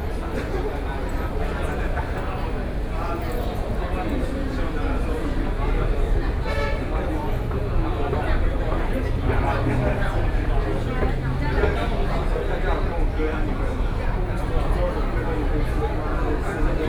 Walking in the station, From the beginning of the platform, To go outside the station
Sony PCM D50+ Soundman OKM II
Taipei City, Taiwan, 27 April 2014, 12:50